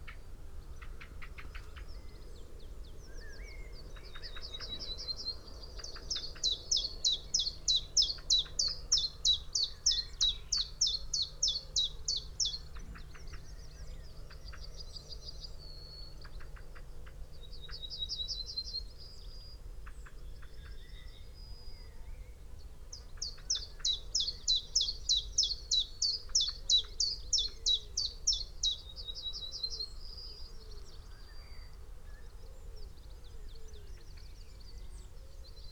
Malton, UK - chiffchaff nest site ...
chiffchaff nest site ... male singing ... call ... in tree ... female calling as she visits nest with food ... xlr sass on tripod to zoom h5 ... bird calls ... song ... from ... yellowhammer ... blackbird ... pheasant ... crow ... whitethroat ... blue tit ... wren ... backgound noise ...